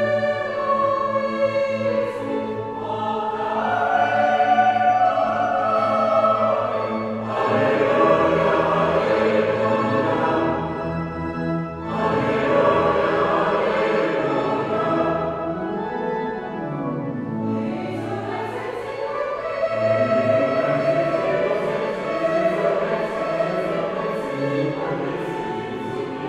clervaux, church, mass
The church organ and choir at the Mother Gods Procession day.
Clervaux, Kirche, Messe
Die Kirchenorgel und der Chor bei der Muttergottesprozession. Aufgenommen von Pierre Obertin im Mai 2011.
Clervaux, église, messe
L’orgue de l’église et la chorale le jour de la procession de la Vierge. Enregistré par Pierre Obertin en mai 2011.
Project - Klangraum Our - topographic field recordings, sound objects and social ambiences
Clervaux, Luxembourg, 12 July 2011